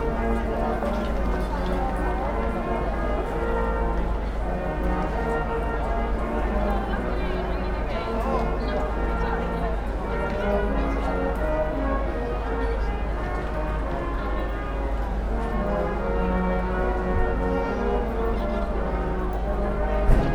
St. Ann's Square, Manchester - Visiting German Christmas Market
Walking around the German Christmas market in Manchester. Voices, buskers playing Christmas Carols...
19 December